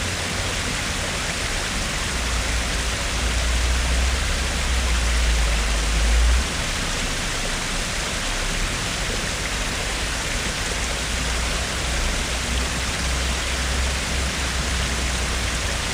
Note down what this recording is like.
Recorded with a pair of DPA 4060s and a Marantz PMD661